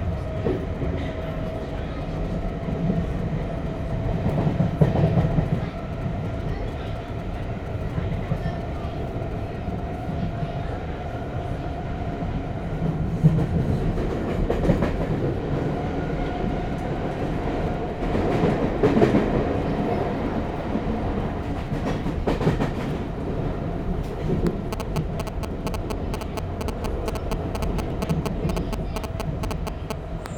London, Hammersmith&City Line
London, subway ride on the Hammersmith&City line from Aldgate East to Kings Cross
2 October 2010, 10:40